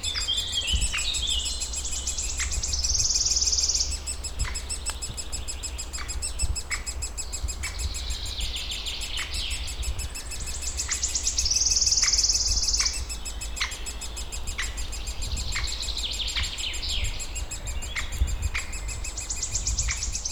late spring forest ambience in Morasko nature reserve. lots of bird activity. nearby a tree with a woodpecker nest full of woodpecker chicks. they make the continuous beeping sound which increases everytime the adult bird comes along with food for the chicks. the whole recording with undergrowth of low freq drone of local traffic.